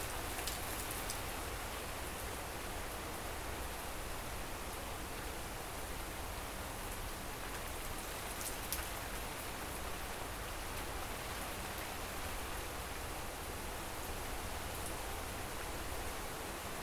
Jl. DR. Setiabudhi, Isola, Sukasari, Kota Bandung, Jawa Barat, Indonesia - Indonesian prayer ambience and rain
Recorded with Roland R09 just inside patio doors: afternoon prayer chants are heard after a rain shower, rain resumes, there is some thunder, the prayer chants resume in the rain, and some ambience of the city can be heard.
22 November 2018, ~13:00